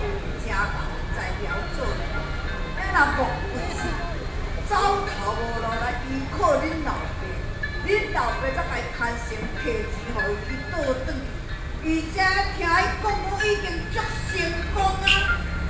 Sec., Guiyang St., Wanhua Dist., Taipei City - Traditional theatrical performances